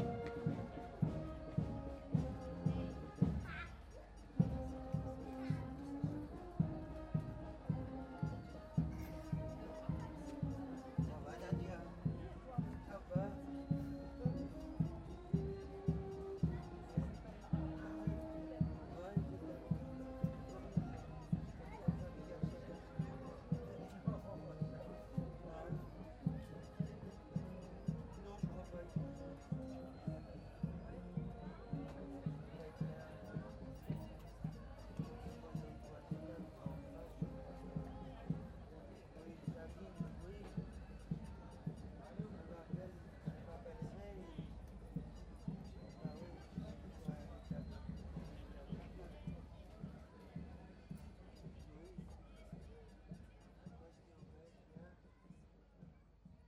Court-St.-Étienne, Belgique - Fanfare
During the annual feast in Court-St-Etienne, the fanfare paces in the street.